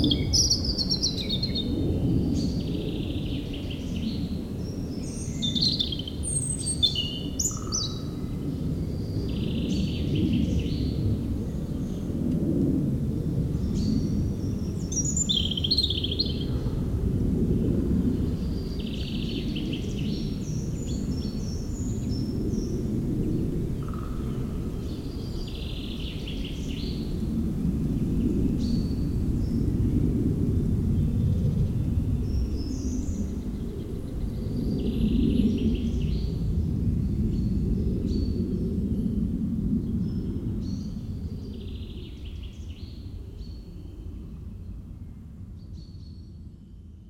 Oud-Heverlee, Belgium - Meerdaalbos
Into the huge forest called Meerdaalbos, the European Robin singing, and planes takeoffs.
29 March, 10:40